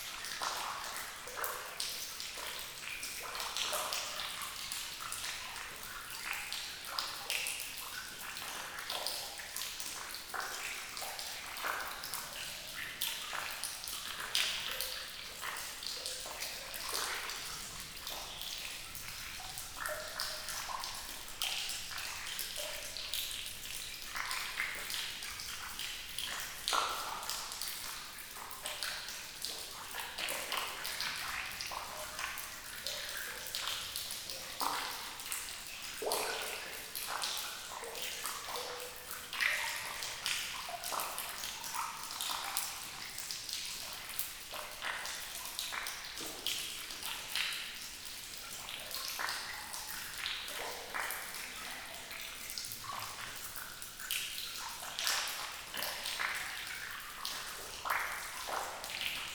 Crugey, France - Drops into the cement mine
Into the wide underground cement mine, drops are falling onto the ground, with a few particular reverb you can hear in near every big mine.
15 June 2017, ~18:00